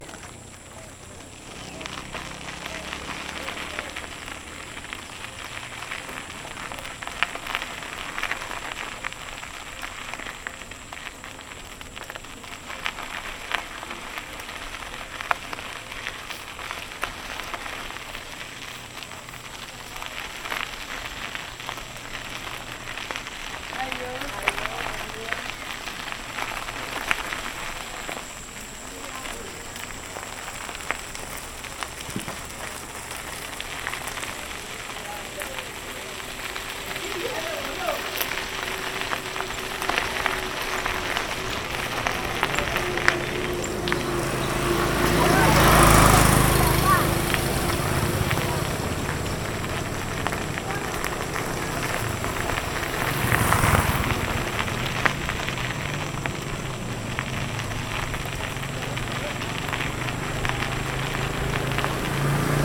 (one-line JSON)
{"title": "San Sebastian De Buenavista-San Zenon, San Zenón, Magdalena, Colombia - rodando en bicicleta", "date": "2022-04-29 07:09:00", "description": "Rodando en bicicleta por las calles en tierra de El Horno", "latitude": "9.27", "longitude": "-74.43", "altitude": "19", "timezone": "America/Bogota"}